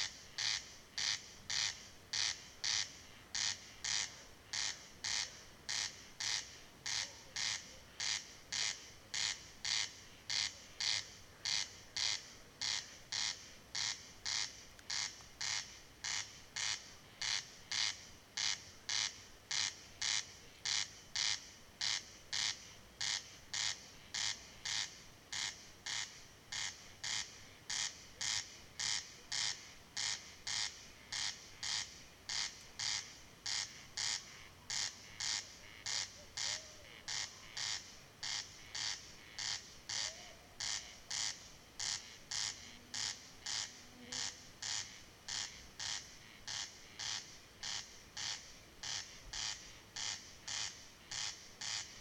field nearby lake Luodis, Lithuania - the endless corn crake
a lone corn crake (crex crex) marks his territory
Utenos apskritis, Lietuva